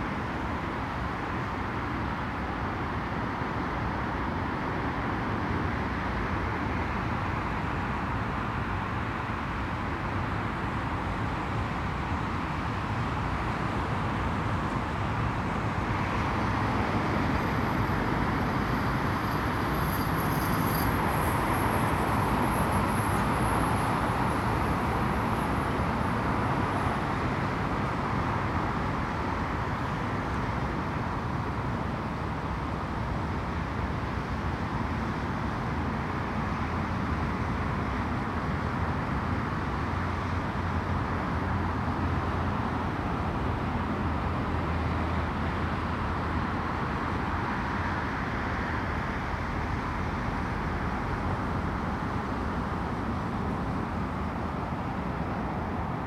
Contención Island Day 24 outer southwest - Walking to the sounds of Contención Island Day 24 Thursday January 28th
The Drive Moor Crescent High Street Grandstand Road
Joggers stepping off into the road
to avoid passing close
Very wet underfoot
A mixed flock of gulls
a low flying flock of geese
presumably Canadas
A flock of redwing
in roadside trees as I return
England, United Kingdom, January 2021